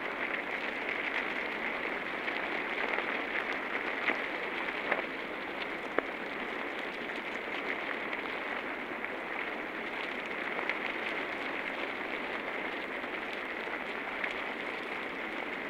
Sirutėnai, Lithuania, in ants nest
Hydrophone in the ants nest